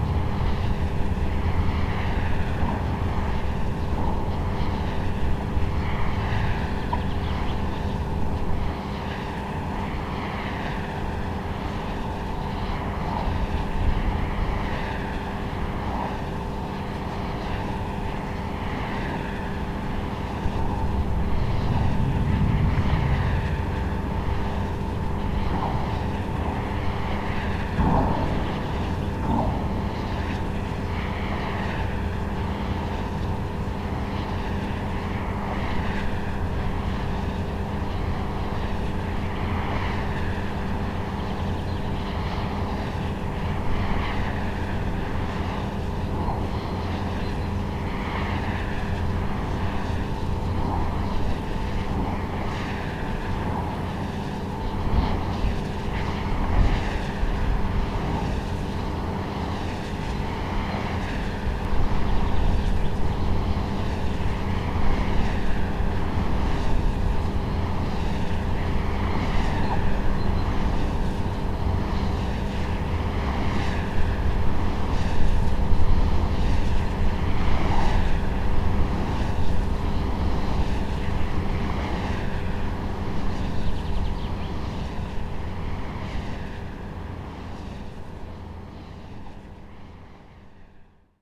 remscheid, dörpmühle: windrad - the city, the country & me: wind turbine
the city, the country & me: may 8, 2011
Remscheid, Germany, May 2011